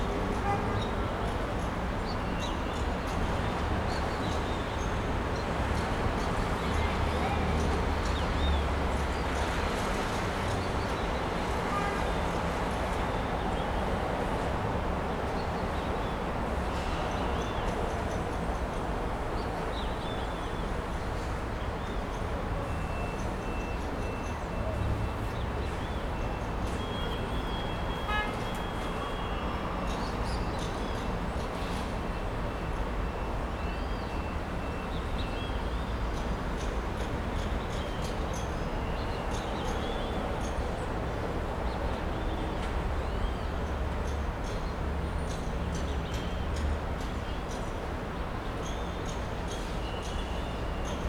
Graças, Recife - PE, República Federativa do Brasil - varanda de casa